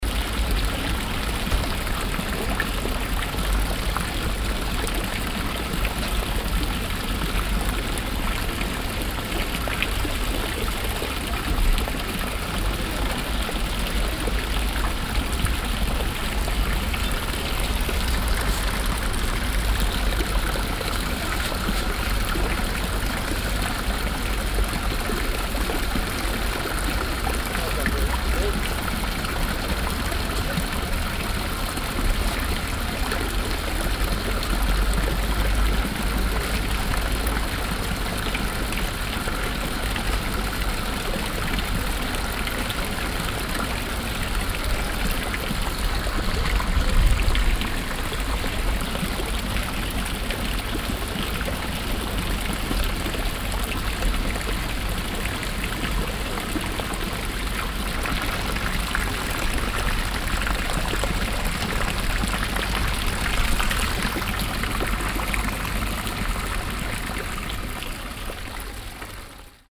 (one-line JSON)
{"title": "cologne, breite strasse, dumont brunnen", "date": "2008-08-02 14:24:00", "description": "das laute plätschern des dumont brunnens, nachmittags in windiger wettersituation\nsoundmap nrw: social ambiences/ listen to the people - in & outdoor nearfield recordings", "latitude": "50.94", "longitude": "6.95", "altitude": "52", "timezone": "Europe/Berlin"}